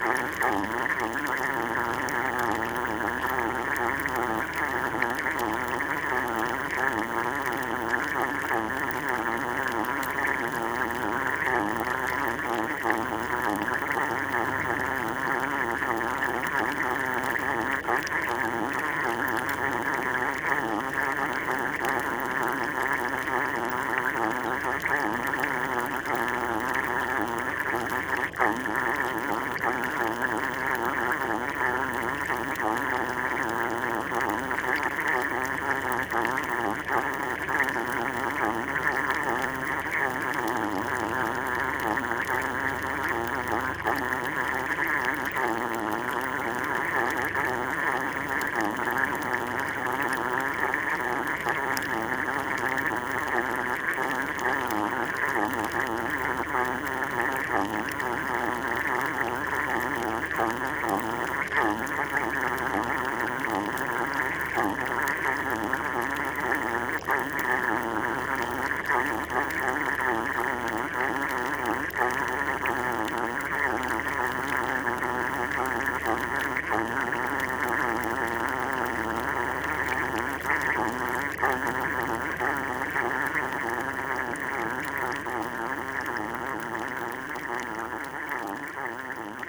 Bracquemont, France - Bubbles on the beach
Recording of a small hole making bubbles on the beach ground, during low tide. A quite strange sound !